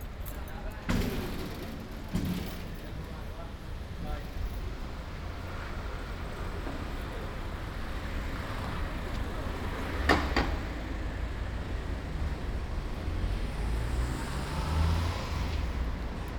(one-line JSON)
{"date": "2020-07-22 16:52:00", "description": "\"It’s five o’clock on Wednesday with bells and post-carding in the time of COVID19\" Soundwalk\nChapter CXIX of Ascolto il tuo cuore, città. I listen to your heart, city\nWednesday, July 22th 2020. San Salvario district Turin, walking to Corso Vittorio Emanuele II and back, four months and twelve days after the first soundwalk during the night of closure by the law of all the public places due to the epidemic of COVID19.\nStart at 4:52 p.m. end at 5 :19 p.m. duration of recording 29’13”\nAs binaural recording is suggested headphones listening.\nThe entire path is associated with a synchronized GPS track recorded in the (kmz, kml, gpx) files downloadable here:\nGo to similar Chapters n. 35, 45, 90, 118", "latitude": "45.06", "longitude": "7.69", "altitude": "239", "timezone": "Europe/Rome"}